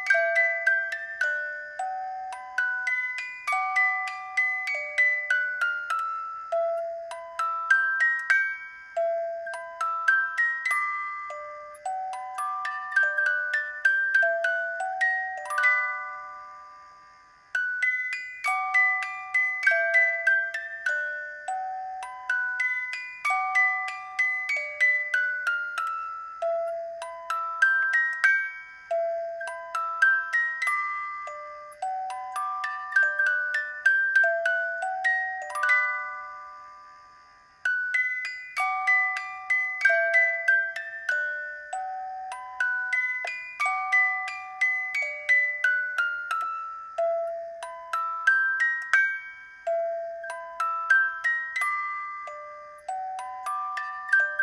This is the house my family lived in from 1964-2002. I left this house to be married 1-6-1968, 53 years ago today. This little music box stood on a shelf in the lounge. Today I am remembering my mother Dorothy, father Harold and brother John who's birthday this is. Sadly all no longer with us. I am of course celbrating my happy marriage to Olive who is very much alive.
MixPre 3 with 2 x Rode NT5s